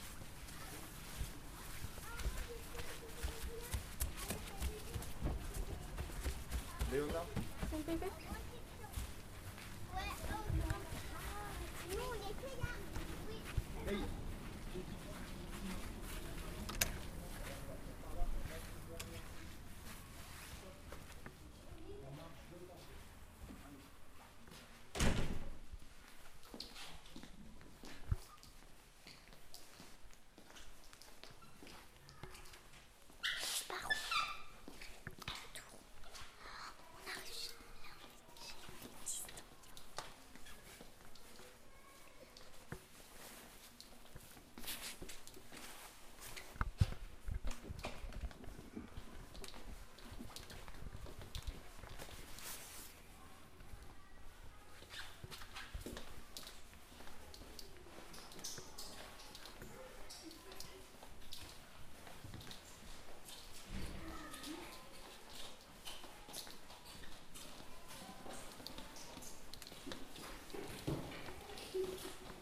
Recording of some childrin walking through the school.